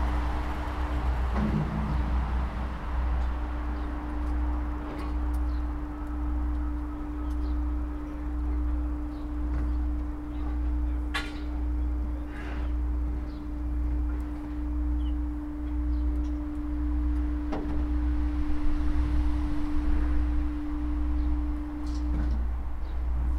Skehacreggaun, Co. Limerick, Ireland - Mungret recycling centre
Listening to recycling. #WLD2018
18 July 2018